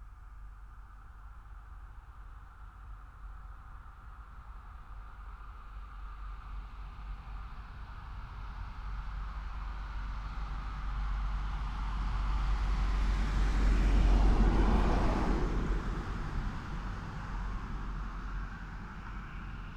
national moment of reflection ... minute's silence in memory of the queen ... went out and placed a xlr sass on bench to zoom h5 ... just to mark the passing of this moment ...
England, United Kingdom, September 2022